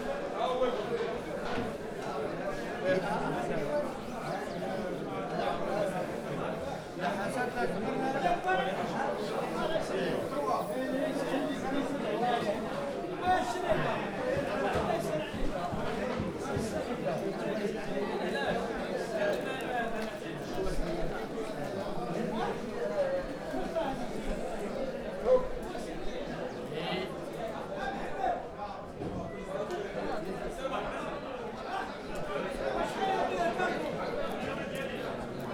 Marché Central Rissani, Marokko - Marché Central Rissani
People chatting on the market in Rissani. Zoom H4
Sijilmassa, Morocco